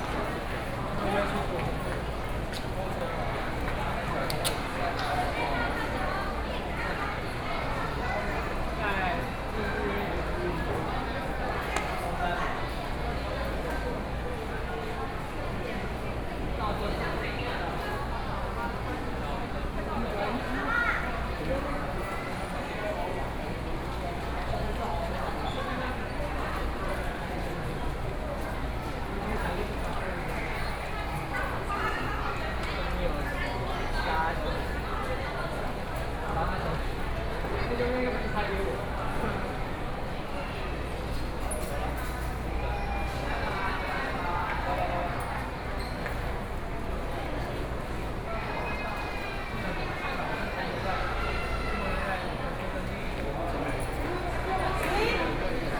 Luodong Station, Yilan County - Station hall
in the Station hall, Tourist
Luodong Township, Yilan County, Taiwan, 28 July 2014